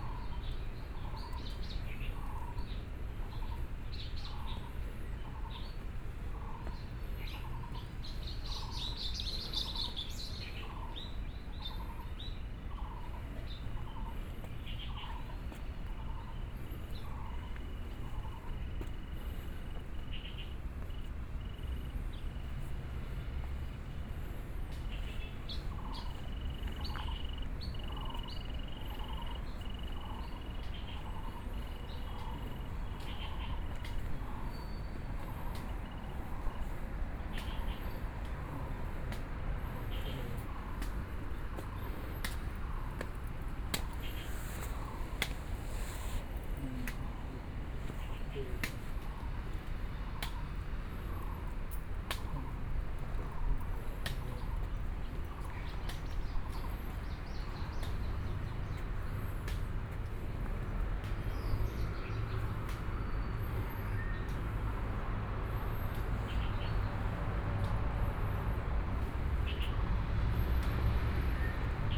碧湖公園, Taipei City - in the Park
Frogs sound, Insects sound, Birdsong, Dogs barking, Traffic Sound